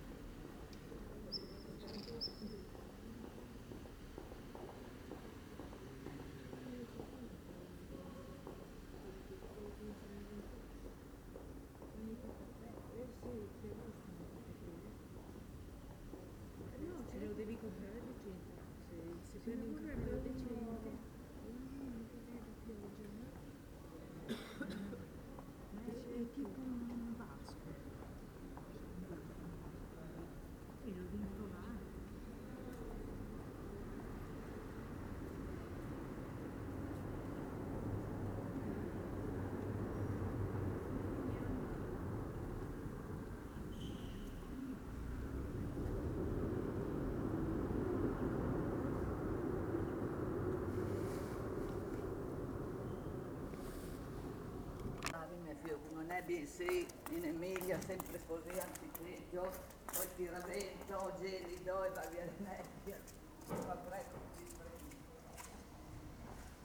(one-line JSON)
{"title": "San Michele Church, Pavia, Italy - 04 - October, Tuesday 8am, foggy 11C, empty square few people passing by", "date": "2012-10-23 08:20:00", "description": "First day of fog of the season, early morning, empty square, birds and few people passing by. an old woman steps out of the church and talking to herself complains about the fog.", "latitude": "45.18", "longitude": "9.16", "altitude": "79", "timezone": "Europe/Rome"}